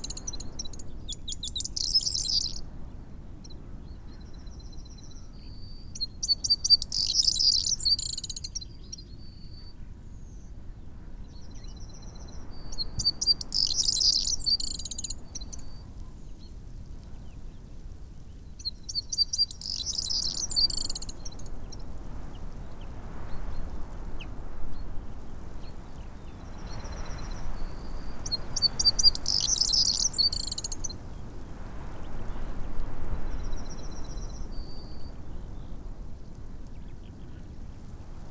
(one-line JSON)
{"title": "Green Ln, Malton, UK - corn bunting ... call ... song ...", "date": "2021-04-11 07:27:00", "description": "corn bunting ... call ... song ... xlr SASS to zoom h5 ... bird calls ... song ... yellowhammer ... crow ... skylark ... linnet ... pheasant ... blackbird ... very windy ... snow showers ... taken from unattended extended unedited recording ...", "latitude": "54.12", "longitude": "-0.56", "altitude": "89", "timezone": "Europe/London"}